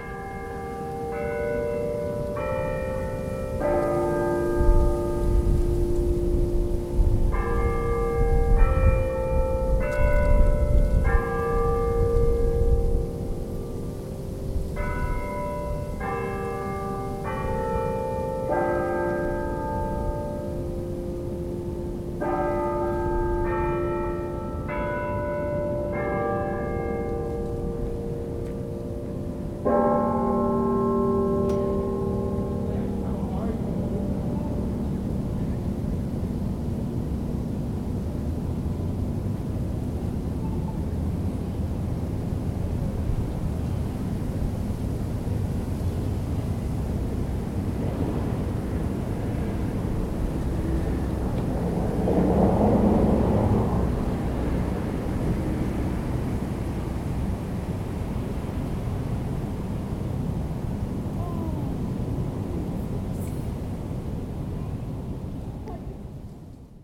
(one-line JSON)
{"title": "Muhlenberg College Hillel, West Chew Street, Allentown, PA, USA - Victors Lament", "date": "2014-12-09 14:22:00", "description": "Recording near Victors Lament provides a direct auditory view of the Muhlenberg bell toll and allows the listener to hear Chew Street and those who are walking on Academic row. We can also hear the sway of leaves in the wind.", "latitude": "40.60", "longitude": "-75.51", "altitude": "120", "timezone": "America/New_York"}